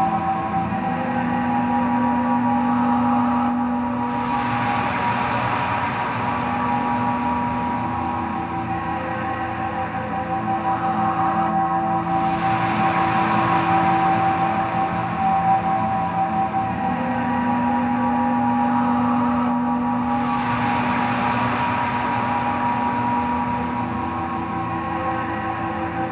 Govalle, Austin, TX, USA - Container Room Recording - Samplers Running Amok
Room recording in a container studio with natural reverb and faint cicadas. Made with a Marantz PMD661 & a pair of DPA 4060s.
20 July, 19:45